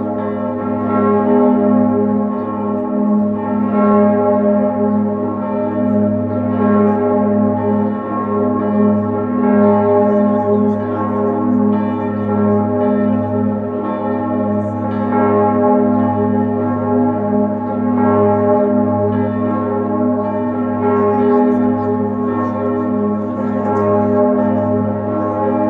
Church Bells at noon from the top of the Cathedral of Bern (Switzerland)
Recorded by an ORTF setup Schoeps CCM4 x 2
On a MixPre6 Sound Devices
Recorded on 24th of Feb. 2019 at 12:00
Sound Ref: CH-190224-04